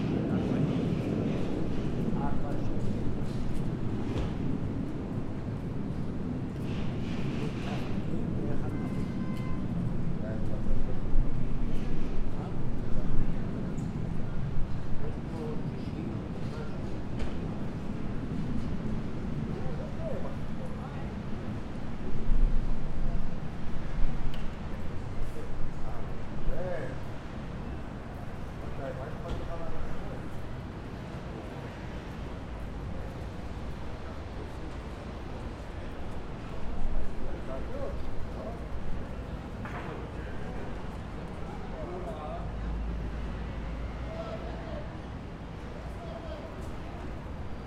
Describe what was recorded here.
field recording in Haifa downtown